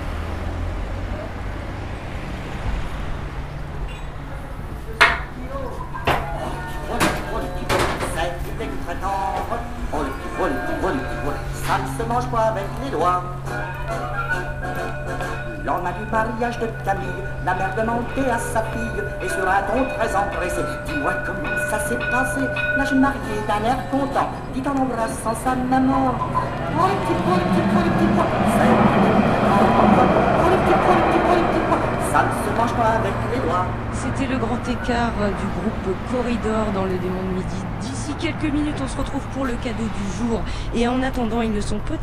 Radio Primitive est une structure socio-culturelle à vocation radiophonique né en 1981 (auparavant, elle émettait illégalement sous le nom de "Radio Manivesle"). C’est une radio qui se fait l’écho quotidiennement de la vie sociale et culturelle locale (et régionale) dont elle relaie les informations.
Reims, France, 4 July 2017, 12:00